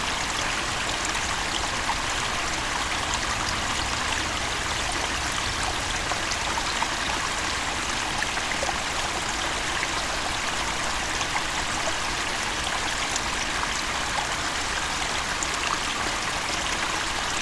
Garneliai, Lithuania, beaver dam
microphones on the beaver dam
26 November 2021, 15:10